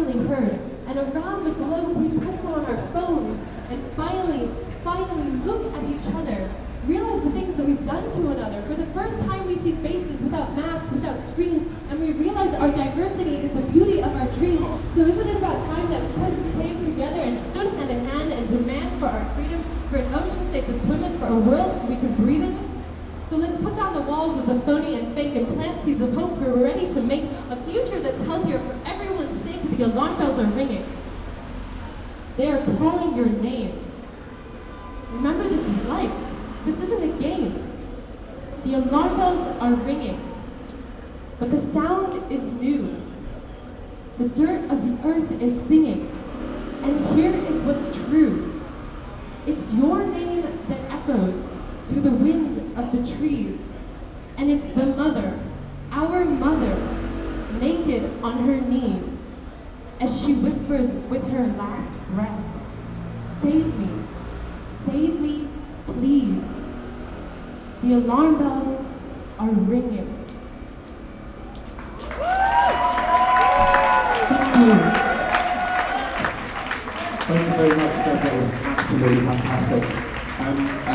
London Derry Street - Extinction Rebellion demonstration
Extinction Rebellion demonstration: Requiem for a Dead Planet “Newspapers – Tell the Truth”
In London – outside Northcliffe House, Derry Street, which is where the Independent, The Daily Mail, The Mail on Sunday, London Live, the Evening Standard and the Metro are all based.
Greater London, England, UK, 19 July 2019